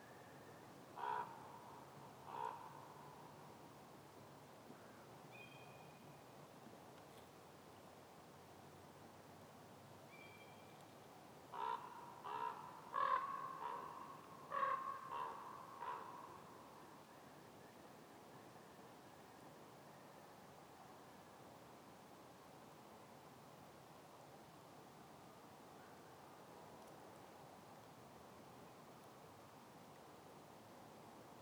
Russia, Arkhangelsk Region, river Shirshima - raven in the forest
raven in the forest, spring
ворон в лесу весной
13 May 2012, 17:00